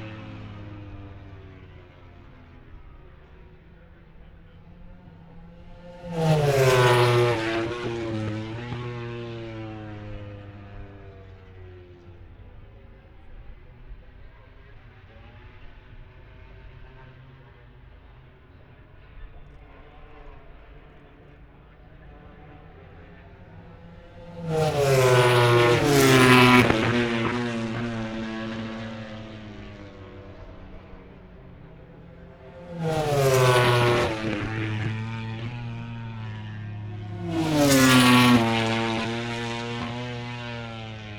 Silverstone Circuit, Towcester, UK - british motorcycle grand prix 2021 ... moto grand prix ...
moto grand prix free practice four ... wellington straight ... olympus ls 14 integral mics ...
England, United Kingdom, 28 August 2021, 13:30